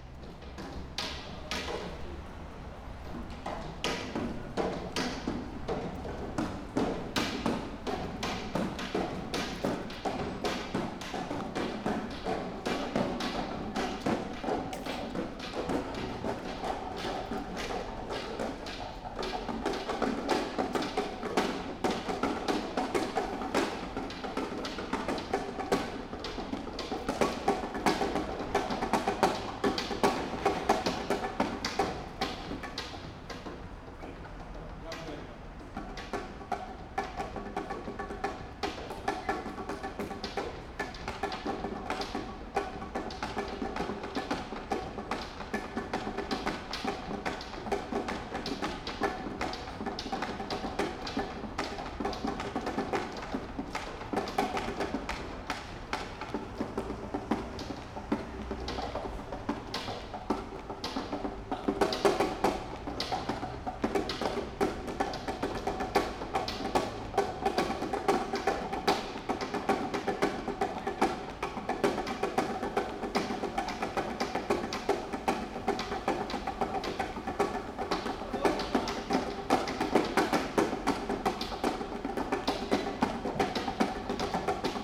Guimarães, square at Rua Paio Galvão - seat drumming
a few guys drumming on a metal boxes/seats/benches, located randomly on the yard of an art school or gallery.